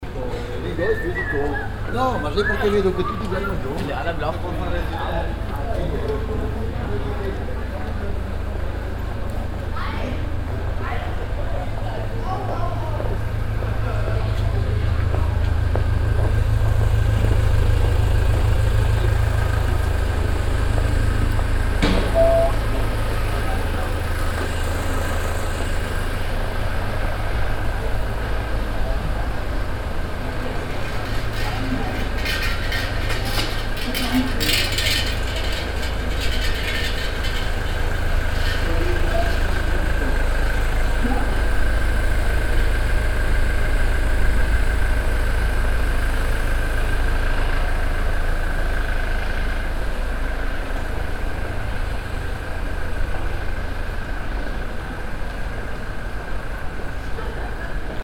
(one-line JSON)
{"title": "cologne, hohe str, polizeiwagen", "date": "2008-09-23 09:25:00", "description": "am abend vor der geplanten rechtsradikalen demo in der hohe str. laufende motoren von polizeiwagen und unbedarfte flaneure\nsoundmap nrw: social ambiences, art places and topographic field recordings", "latitude": "50.94", "longitude": "6.96", "altitude": "59", "timezone": "Europe/Berlin"}